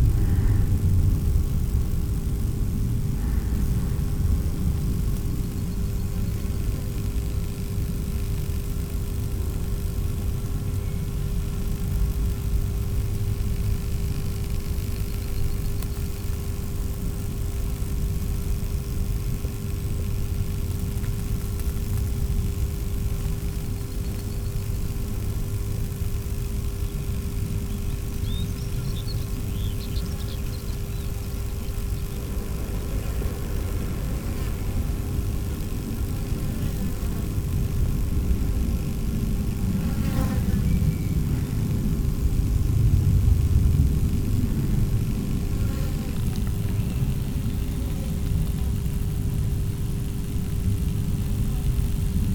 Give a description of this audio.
Worrisome sound of a power station. Surprise at 2:40 mn, and apocalypse beginning at 3:43 mn !